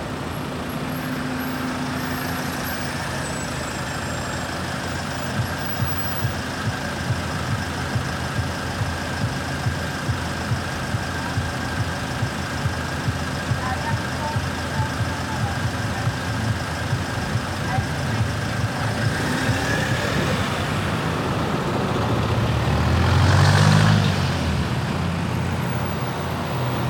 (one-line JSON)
{"title": "Prinzenallee, Soldiner Kiez, Wedding, Berlin - Prinzenallee - Traffic jam", "date": "2013-08-09 15:44:00", "description": "Prinzenallee - Stau auf der Prinzenallee.\nPrinzenallee - Traffic jam.\n[I used a Hi-MD-recorder Sony MZ-NH900 with external microphone Beyerdynamic MCE 82]", "latitude": "52.56", "longitude": "13.39", "altitude": "41", "timezone": "Europe/Berlin"}